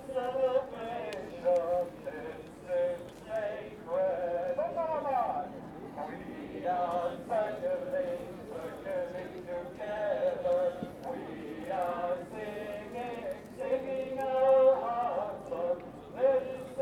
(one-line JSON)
{"title": "Occupy Vienna, Heldenplatz", "date": "2011-10-15 12:30:00", "description": "100 people singing together on Heldenplatz.", "latitude": "48.21", "longitude": "16.36", "altitude": "177", "timezone": "Europe/Vienna"}